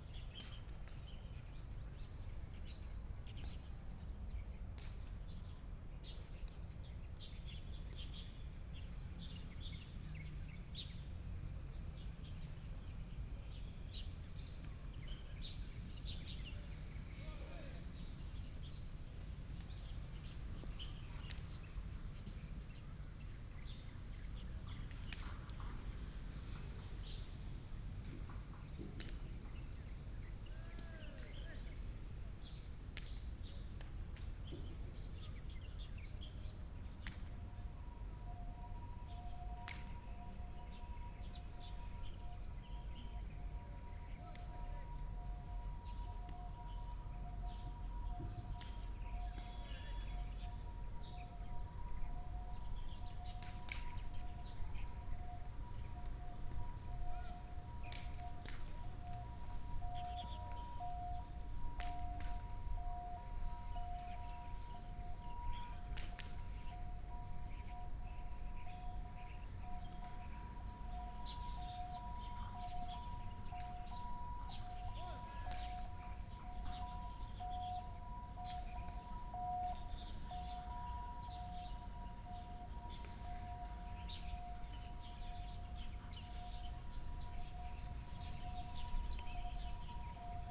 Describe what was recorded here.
Under the tree, Birds sound, The sound of the ambulance, Playing baseball, Here was the home area of soldiers from China, Binaural recordings, Sony PCM D100+ Soundman OKM II